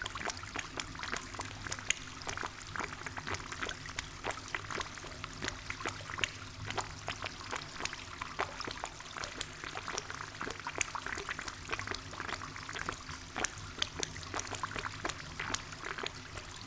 Thionville, France - Victor mine
In the main tunnel of the very old mine called Victor, a tube makes strange sounds with water flowing from a small hole.
Hayange, France, 30 October 2016, 11:00